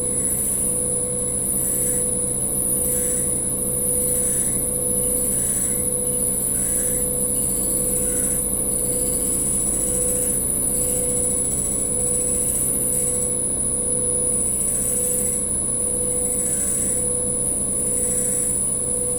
room, Novigrad, Croatia - air conditioning